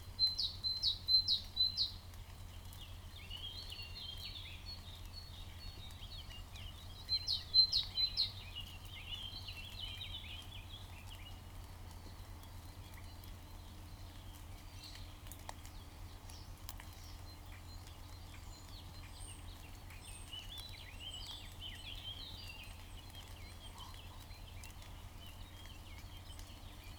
Ellend, Magyarország - Waterdrops from willows with cars passing
listening to waterdrops falling from willow trees in the morning, while two cars are passing by.